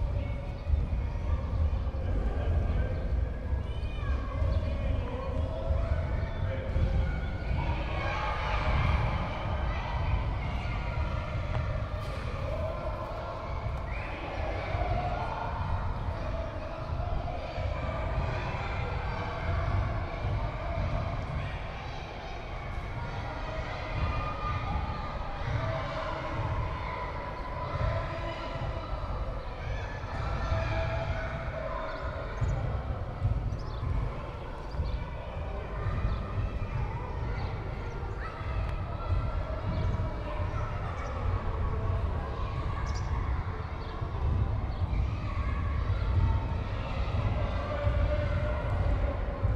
OŠ Frana Erjavca, Nova Gorica, Slovenija - OŠ Frana Erjavca
An outside recording of kids playing inside the gym.
Nova Gorica, Slovenia, 7 June 2017